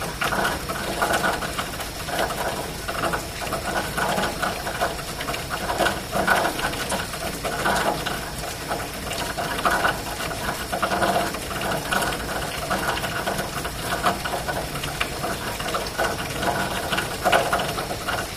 Crescent Hill, Louisville, KY, USA - Zen Rain (2:31am)
Rain falling in an elbow of a downspout with cicadas.
Recorded on a Zoom H4n.
23 September 2013, 02:31